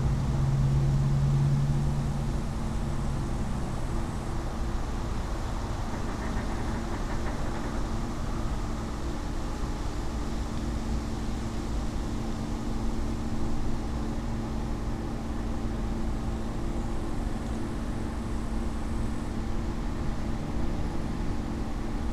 Utena, Lithuania, inside abandoned hangar
space inside abandoned hangar. constant drone is from the near factory